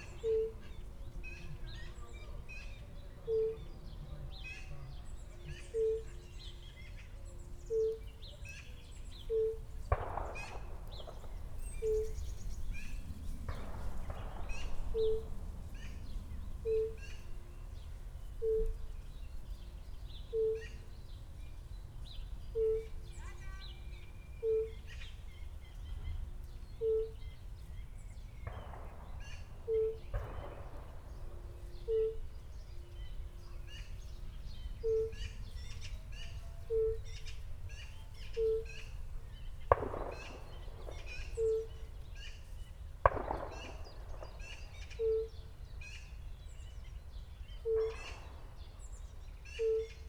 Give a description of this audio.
sitting at the edge of a shallow pond, listening to the fire-bellied toads calling, distant announcements of a short marathon, a bit later the runners passing by, a drone appears, shots all the time, (Sony PCM D50, DPA4060)